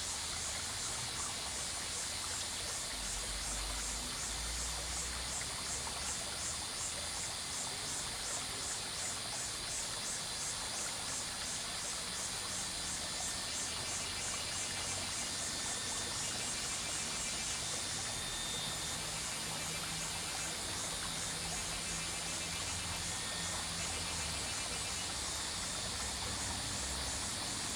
Cicadas cry, The sound of the river, Traffic Sound
Zoom H2n MS+XY
Nantou County, Puli Township, 桃米巷37號, 7 June, ~09:00